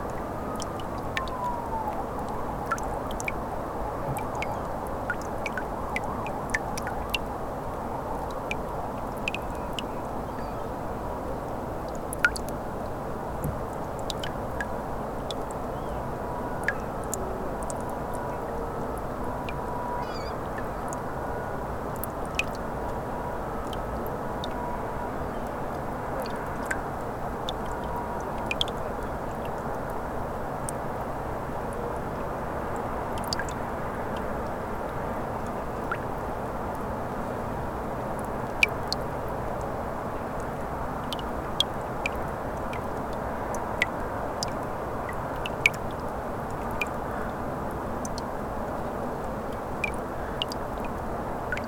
Kaunas, Lithuania - Melting ice plates dripping
Water dripping from the melting ice plates on the shore of Neris river. Recorded with ZOOM H5.
Kauno miesto savivaldybė, Kauno apskritis, Lietuva, 23 February